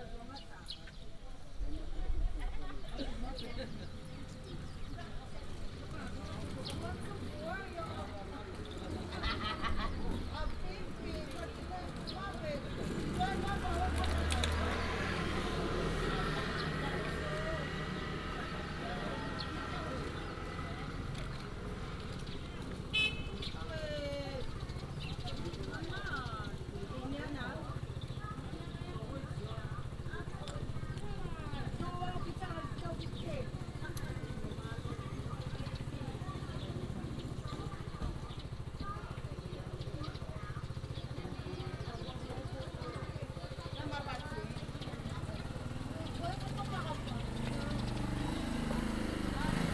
Daniao, Dawu Township, 大武鄉大鳥 - Tribal main road
Beside the road, Traffic sound, Tribal main road, Residents gather to prepare for a ride, birds sound
Binaural recordings, Sony PCM D100+ Soundman OKM II